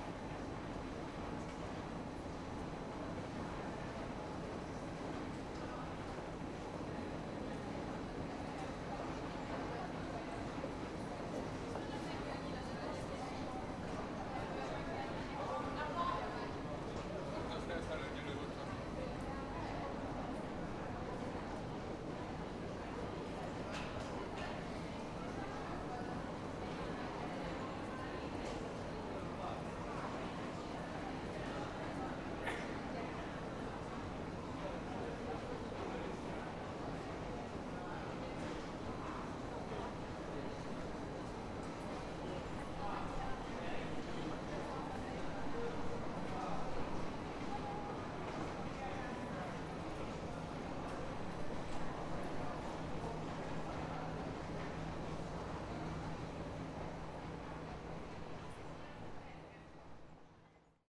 Deak Ferenc Ter Underground - deak ferenc ter minus one
People walking and talking on the minus one level of the metro station deak ferenc ter, from far the escalator and trains are audible. A lot of other stations are renewed, this one is still old.